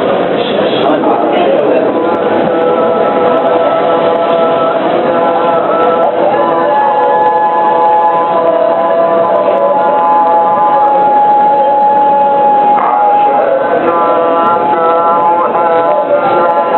Marrakech, La Koutubia Mosque - Marrakech, La Koutubia Mosque1